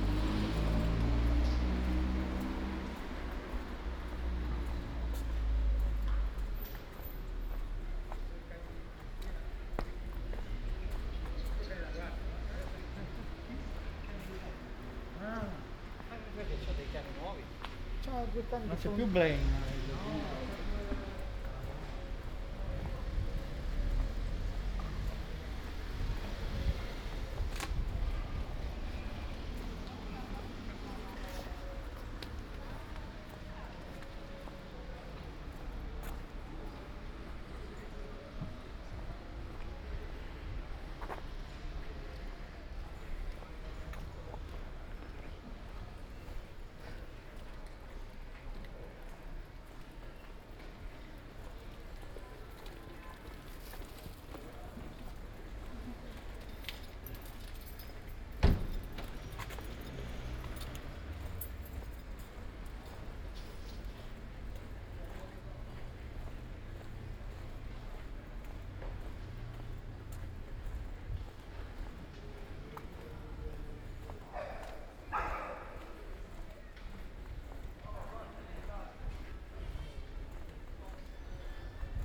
Ascolto il tuo cuore, città. I listen to your heart, city. Several chapters **SCROLL DOWN FOR ALL RECORDINGS** - It’s seven o’clock with bells on Tuesday in the time of COVID19 Soundwalk
"It’s seven o’clock with bells on Tuesday in the time of COVID19" Soundwalk
Chapter LXXXVII of Ascolto il tuo cuore, città. I listen to your heart, city
Tuesday, May 26th 2020. San Salvario district Turin, walking to Corso Vittorio Emanuele II and back, seventy-seven days after (but day twenty-three of Phase II and day ten of Phase IIB and day four of Phase IIC) of emergency disposition due to the epidemic of COVID19.
Start at 6:51 p.m. end at 7:17 p.m. duration of recording 26’09”
The entire path is associated with a synchronized GPS track recorded in the (kmz, kml, gpx) files downloadable here: